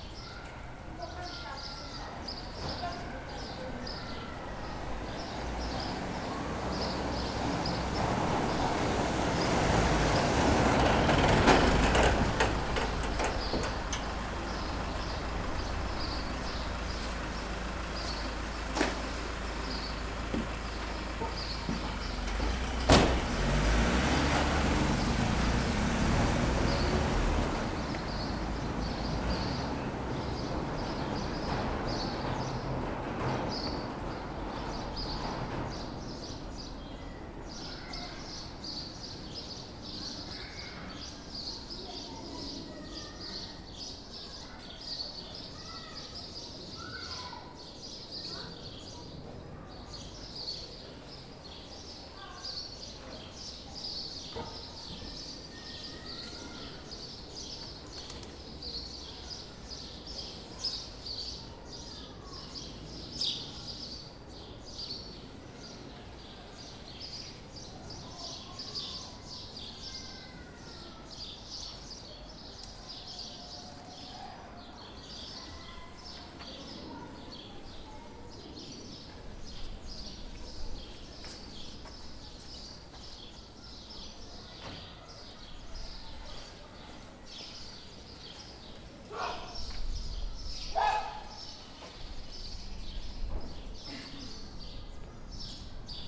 Rue de la Légion dHonneur, Saint-Denis, France - Intersection of R. Legion dhonneur and R. des Boucheries
This recording is one of a series of recording, mapping the changing soundscape around St Denis (Recorded with the on-board microphones of a Tascam DR-40).
25 May 2019, 11:30